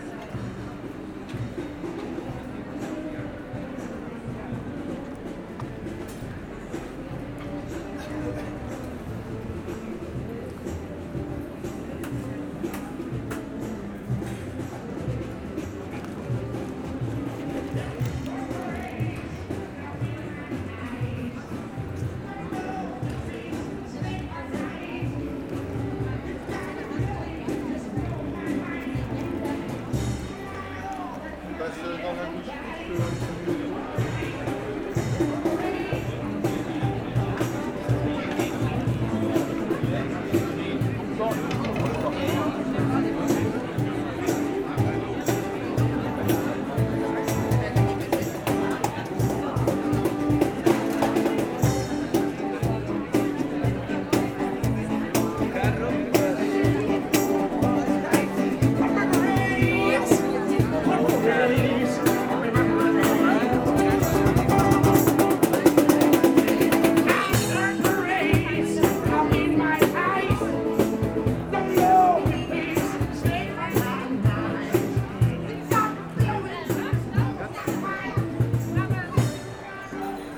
Groentenmarkt, Gent, Belgium - The pleasant Ghent city on a sunny sunday afternoon
On a very sunny sunday, during a smooth autumn, its a good day to take a walk on the pleasant city of Gent (Gent in dutch, Gand in french, Ghent in english). Its a dutch speaking city. In this recording : tramways driving on a curve, very very very much tourists, street singers, ice cream, white wine, oysters, cuberdon (belgian sweets). Nothing else than a sweet sunday afternoon enjoying the sun and nothing else matters. Walking through Korenmarkt, Groentenmarkt, Pensmarkt, Graslei.
15 October 2017, 15:23